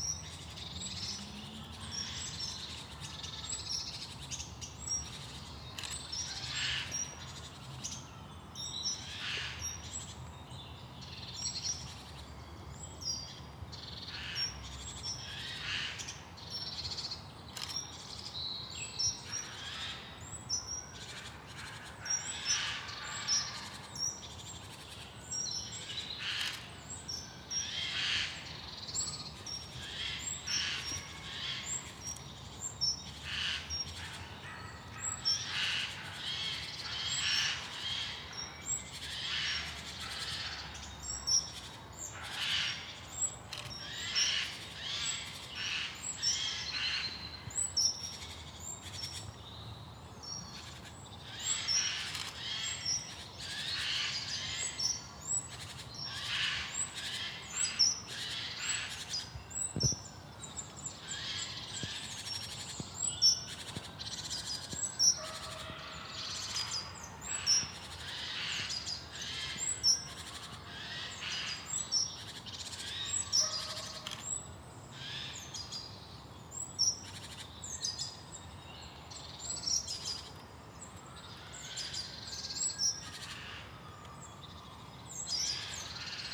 Mile End, Colchester, Essex, UK - Noisy birds in Highwoods Country Park
Birds of various types being rather raucous in the woods. Best listened with headphones.